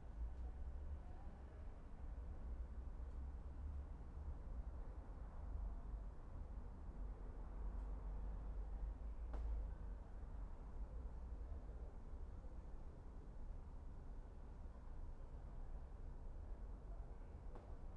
Husemannstraße, Berlin, Germany - Night, spring, conversation
Sidestreet, Berlin, three people conversation, doors slammed, quiet night